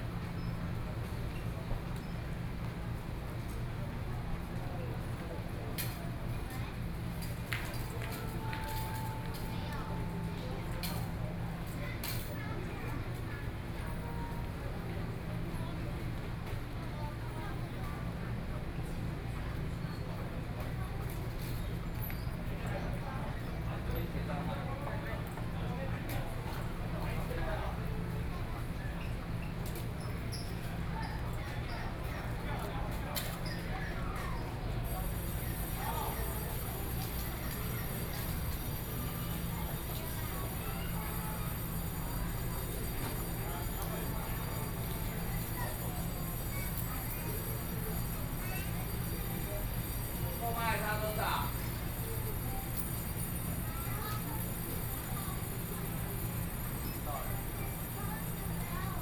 in the Station entrance, Zoom H4n+ Soundman OKM II